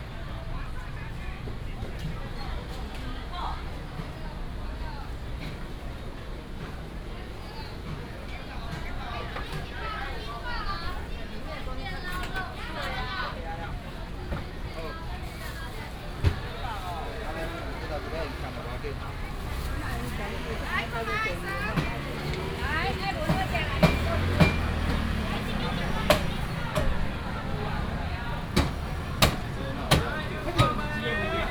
Walking in the market, Traffic sound